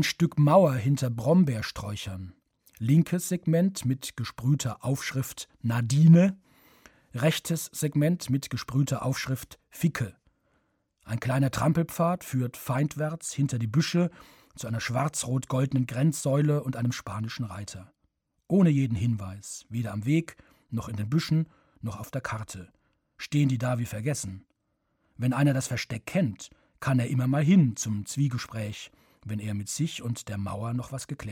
waddekath - hinter dem dorf
Produktion: Deutschlandradio Kultur/Norddeutscher Rundfunk 2009
Diesdorf, Germany, 8 August, ~21:00